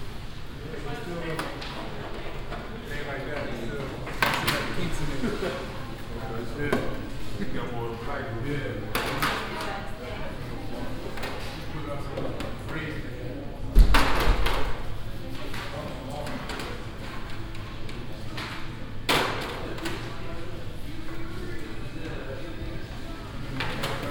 Schnellimbiss Atmo am frühen Abend, mtv bBeschallung, Bestellungen, Tablett sortieren, Türen schlagen
soundmap nrw: social ambiences/ listen to the people - in & outdoor nearfield recordings